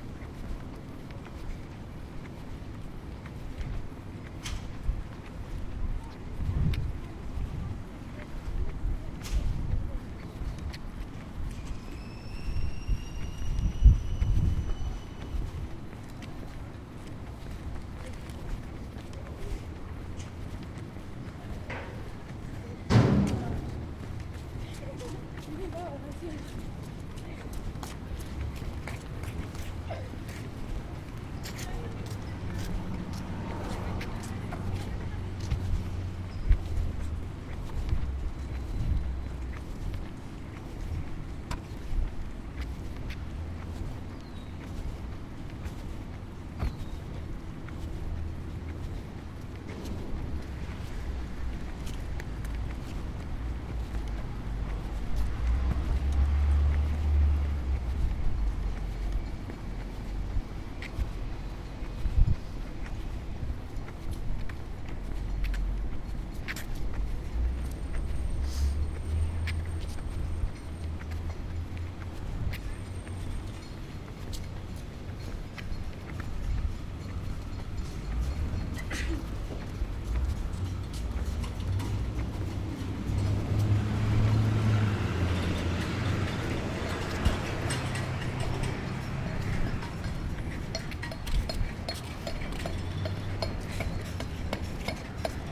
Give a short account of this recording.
Walking from Veteran Ave. to UCLAs Schoenberg Music Building.